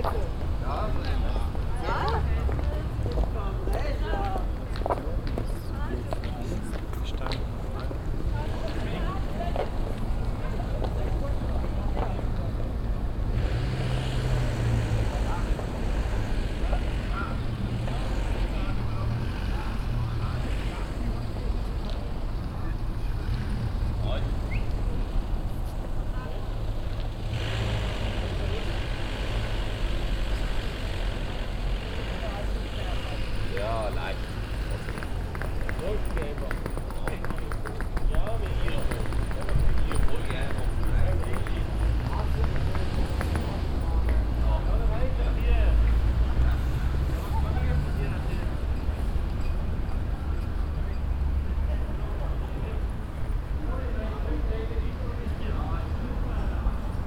abends, schritte auf historischem kopfsteinpflaster, stimmen von altstadt besuchern, ein pkw
soundmap nrw: social ambiences/ listen to the people - in & outdoor nearfield recordings
altstadt, judengasse